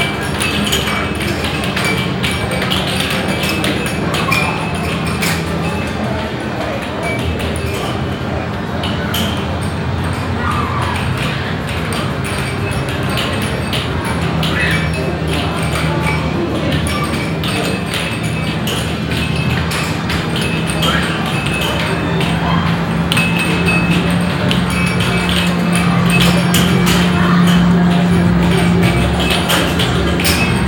{"title": "Leba, Wojska Polskiego street - shove-halfpenny parlor", "date": "2014-08-16 16:58:00", "description": "binaural rec / walking towards a game parlor on one of the busiest promenades in Leba. a short visit in the shove-halfpenny section of the parlor.", "latitude": "54.76", "longitude": "17.56", "altitude": "2", "timezone": "Europe/Warsaw"}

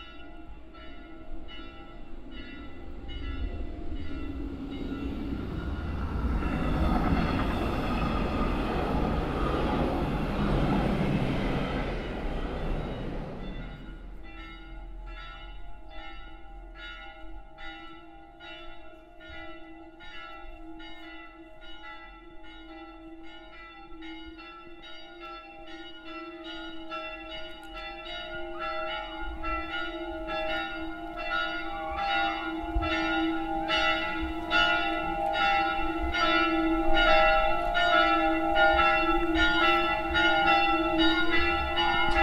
{"title": "enscherange, train track and church bells", "date": "2011-08-03 16:13:00", "description": "At the train track as the gates close with a warning signal. A train passing by and the 12 o clock bells of the Saint Laurent church start.\nAlso present here in the background the sound of playing kids at the nearby camping areal.\nEnscherange, Zugschiene und Kirchenglocke\nBei den Schienen, als die Schranke mit einem Warnsignal schließt. Ein Zug fährt hindurch und die 12-Uhr-Glocke von der St. Laurentius-Kirche beginnt zu läuten.\nEbenfalls hier im Hintergrund das Geräusch von spielenden Kindern auf dem nahe gelegenen Campingareal.\nEnscherange, voir ferrée et cloches de l'église\nAu passage à niveau quand les barrières se ferment et que le signal retentit. Un train passe et le carillon de l’église Saint-Laurent commence à sonner 12h00.\nIci aussi dans le fond, le bruit d’enfants qui jouent sur le terrain de camping proche.\nProject - Klangraum Our - topographic field recordings, sound objects and social ambiences", "latitude": "50.00", "longitude": "5.99", "altitude": "305", "timezone": "Europe/Luxembourg"}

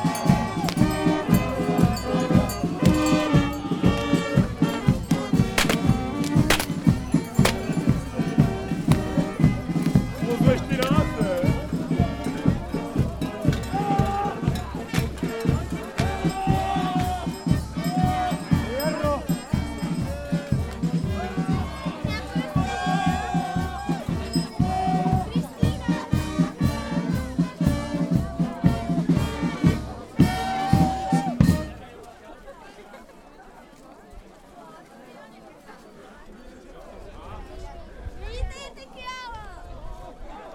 {"title": "Studnice, Czech Republic - carnival at studnice", "date": "2012-02-18 15:05:00", "description": "field recording of the masopust/fasching/carnival/vostatky celebration at Studnice. The unique masks are listed by Unesco as a cultural heritage.Masks and musicans walking from house to house to perform the traditional dance, being hosted by snacks and alcohol.", "latitude": "49.74", "longitude": "15.90", "altitude": "631", "timezone": "Europe/Prague"}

